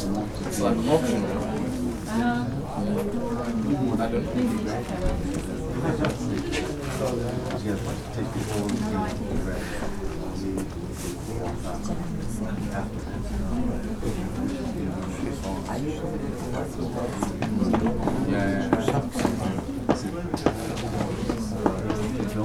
Bratislava-Petržalka, Slovenská republika - At the Alien Police Department I
Queueing at Bratislava's Alien Police Department